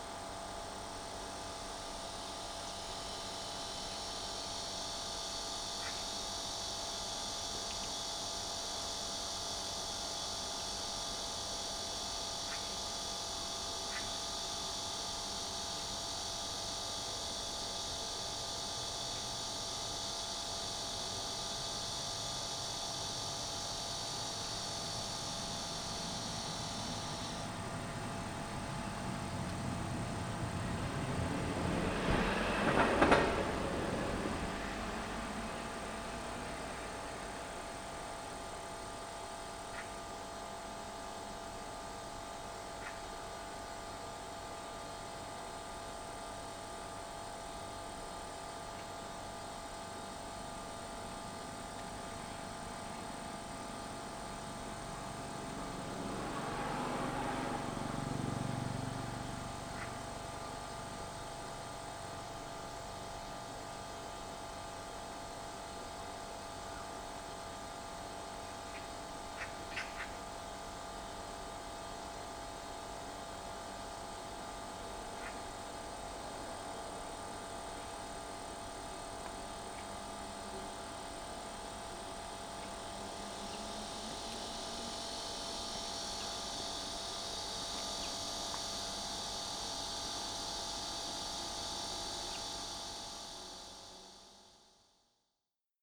대한민국 서울특별시 서초구 방배4동 87-77 - A/C outdoor unit, Cicada
A/C outdoor unit, Cicada
에어컨 실외기, 매미